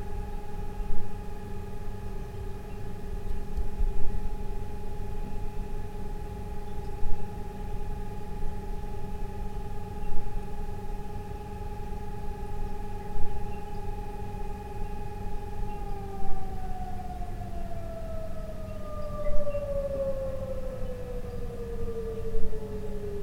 Test of sirens and karma, Smíchov
Favourite sound of test of siren, crackling of gas stove called karma and fidley in still very cold noon in February.